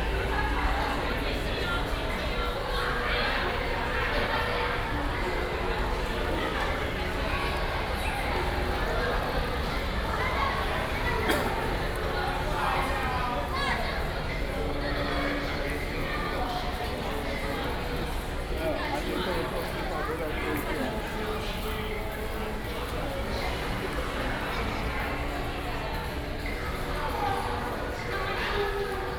北投站 Beitou, Taipei City - below of the MRT track